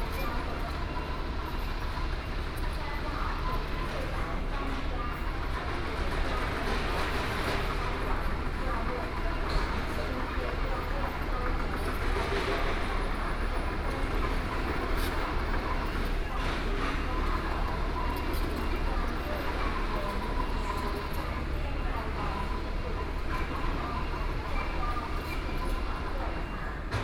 Taichung Station, Taichung City - In the station platform
In the station platform, Railway Construction, Station broadcast messages, Train stops, Zoom H4n+ Soundman OKM II